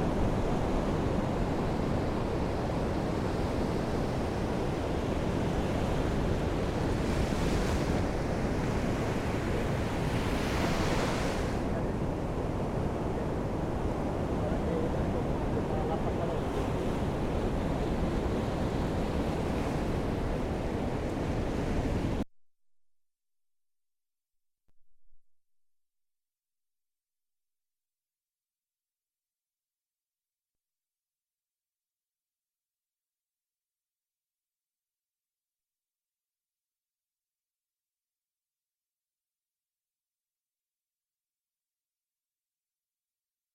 Benicasim, Castellón, España - Voramar Beach - Hotel
Voramar beach, in front of the Hotel Voramar. Rode nt-5 (omni) + mixpre + Tascam dr-680, DIY Jecklin disk
8 April 2015, 19:45